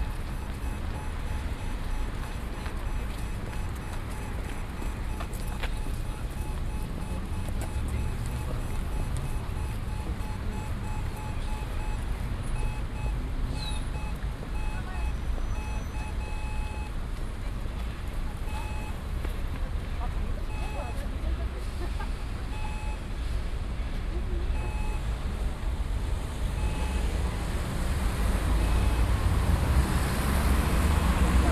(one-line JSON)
{"title": "City centre pedestrian crossing, Tartu, Estonia", "latitude": "58.38", "longitude": "26.73", "altitude": "41", "timezone": "Europe/Berlin"}